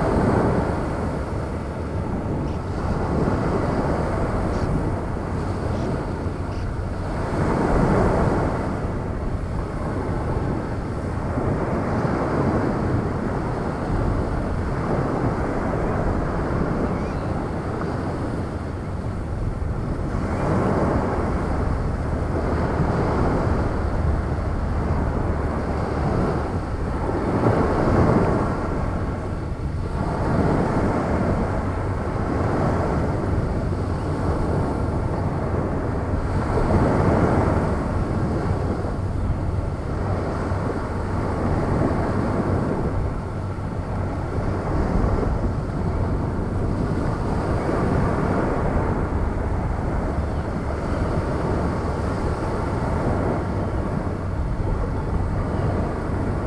Unnamed Road, Gdańsk, Poland - Mewia Łacha 1
6 August 2017